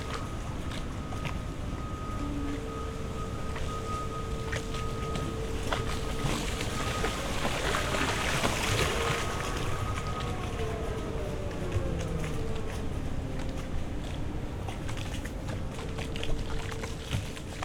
Berlin, Plänterwald, Spree - at the river Spree, summer Sunday morning
place revisited on a summer Sunday morning, cement factory at work, a boat is passing-by, river sounds
(SD702, Audio technica BP4025)